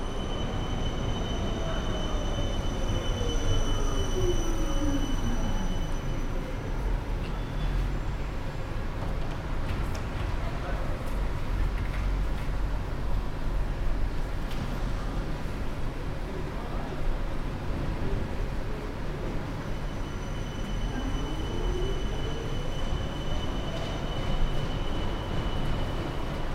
Willy-Brandt-Platz, Erfurt, Germany - Erfurt tram station ambience
Prominent onset, glides of tram wheels, people.
Recording gear: Zoom F4 field recorder.